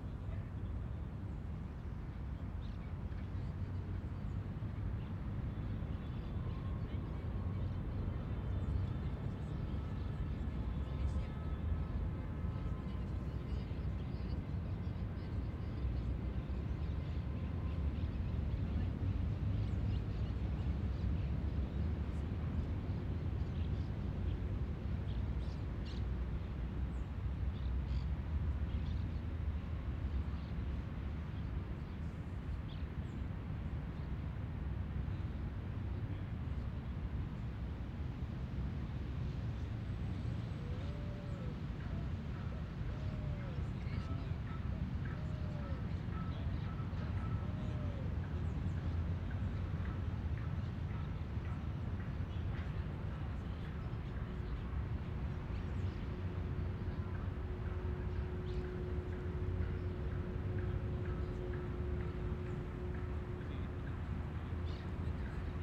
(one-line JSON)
{"title": "Plaza de las Naciones Unidas, CABA, Argentina - Floralis", "date": "2018-06-27 13:00:00", "description": "Los sonidos en los que está inmersa la Floralis Genérica.", "latitude": "-34.58", "longitude": "-58.39", "altitude": "11", "timezone": "America/Argentina/Buenos_Aires"}